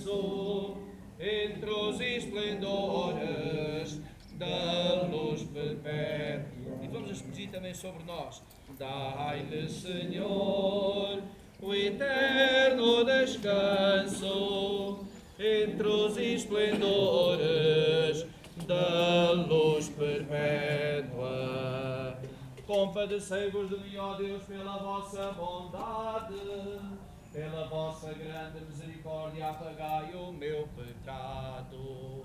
cemetery, Castelo de Santiago do Cacém, Portugal - all saints day, celebration
at Castelo de Santiago do Cacém, Portugal, christian ritual most probably related to All Saints day, lots of wind on the castl (Sony PCM D50, DPA4060)